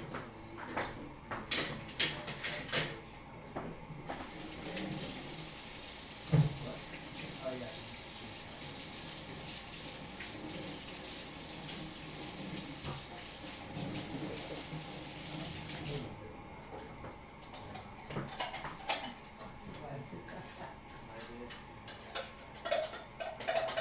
Euclid Ave. Apt A, Boulder, CO - Whatevs
just hangin out